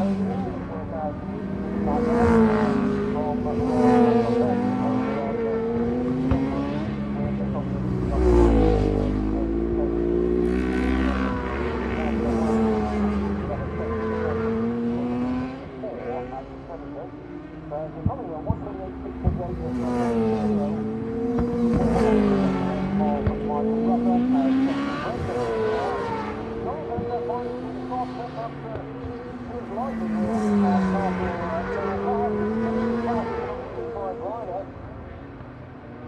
british superbikes 2002 ... cadwell park ... superbikes qualifying ... one point stereo mic to minidisk ... correct date ... time not ...
East Midlands, England, United Kingdom, 27 August 2005, 11:00